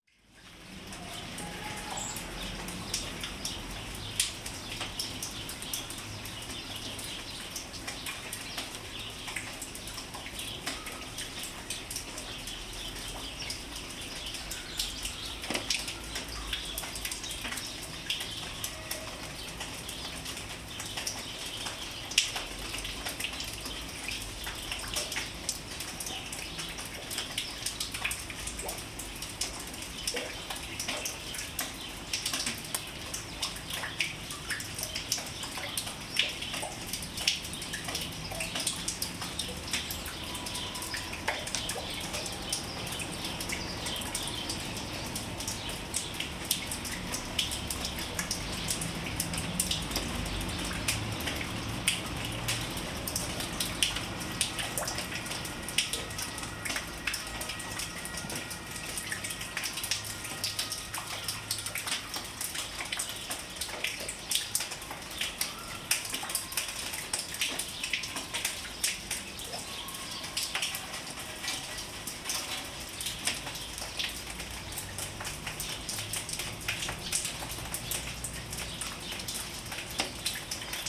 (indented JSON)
{
  "title": "Heinrichstr. - THE FIRST SNOW FLAKES 2021 (3D Binaural)",
  "date": "2021-12-02 15:07:00",
  "description": "\"The First Snow Flakes 2021\"\nIt was a kind of Wet-Snow falling outside in front of the main entrance. I recorded everything in Ambisonics format, which in post I converted in 3D Binaural Sound. Distant ambulance car passing by and birds on the parking place are heard as well.",
  "latitude": "52.10",
  "longitude": "9.37",
  "altitude": "69",
  "timezone": "Europe/Berlin"
}